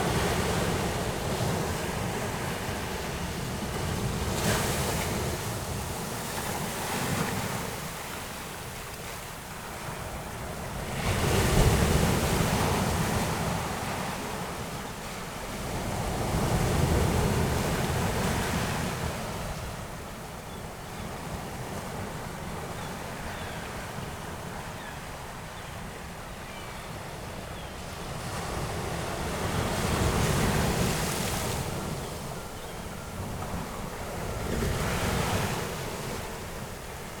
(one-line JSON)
{"title": "Laguna Verde, Región de Valparaíso, Chile - pacific ocean, waves", "date": "2015-12-05 13:50:00", "description": "Laguna Verde, near Valparaiso, Chile. Sound of the waves and water flowing back over the sand, recorded near a power station\n(Sony PCM D50, DPA4060)", "latitude": "-33.10", "longitude": "-71.67", "altitude": "11", "timezone": "America/Santiago"}